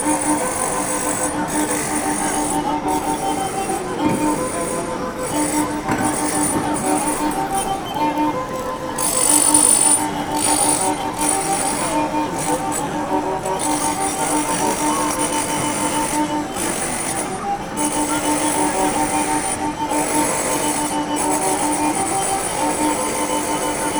COUCOU LES NAUFRAGES ! La vielle roue et le marteaux - La vielle roue et le marteaux
…Vagabondage.. errance... quelques fois blackboulés..souvent marginaux... sois disant dingues ! Human Alarm... "chevaux de génies" et autres Chevaliers à la joyeuse figure…
observed with : ++>
Paris, France, 7 April 2011, 13:35